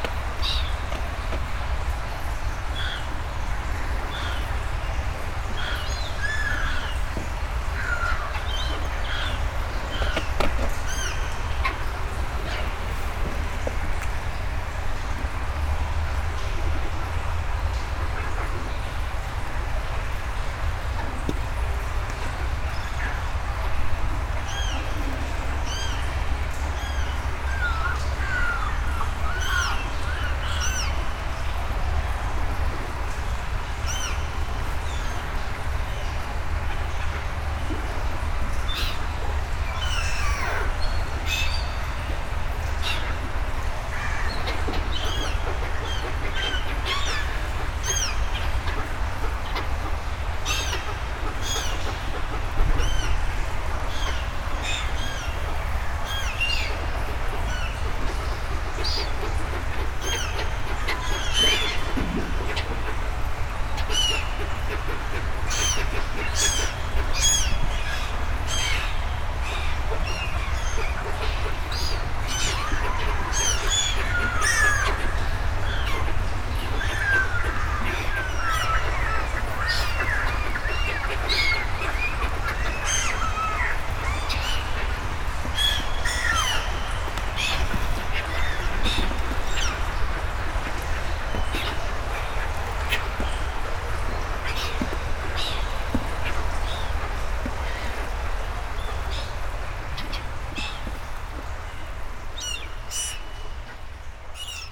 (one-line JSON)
{"title": "Vilvoorde, Belgium - Seagulls eating shit or something like", "date": "2017-12-10 07:55:00", "description": "Into the underground Senne river, everything is very-very dirty. Is it a river or is it a sewer ? That's not very clear for me. This river is contaminated, it's smelly. Sludge are grey and sticky. It's disgusting. At the end of the tunnel, seagulls are eating some small things floating on the water (is it still water ?). Sorry for the quite patronizing tittle, but it was unfortunately something like that.", "latitude": "50.93", "longitude": "4.41", "altitude": "12", "timezone": "Europe/Brussels"}